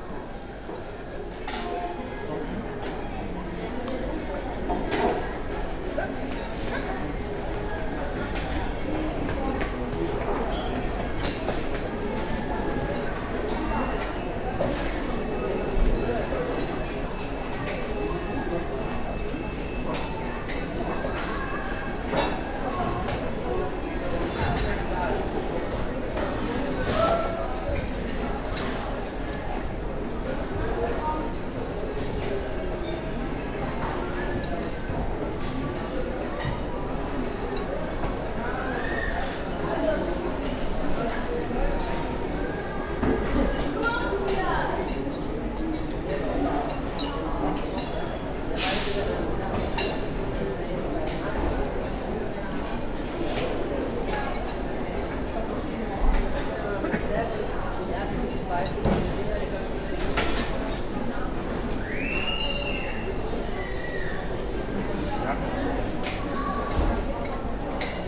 cologne, butzweilerhof, restaurant of a swedish furniture manufactor
not visible on the map yet - new branch house of a swedish furniiture company - here atmo in the restaurant
soundmap nrw: social ambiences/ listen to the people in & outdoor topographic field recordings
4 July 2009